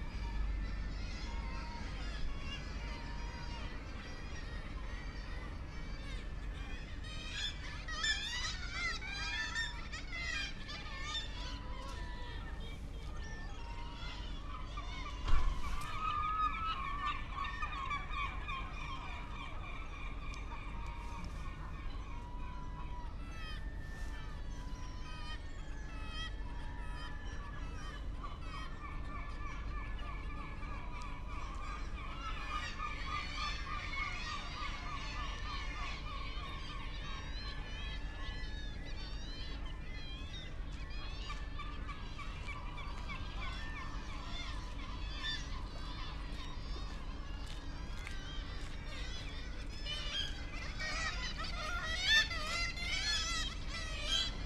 Recorded with Zoom H6 earworm 3 microphone and dummyhead, use headphones
Finnmark, Norge, 28 July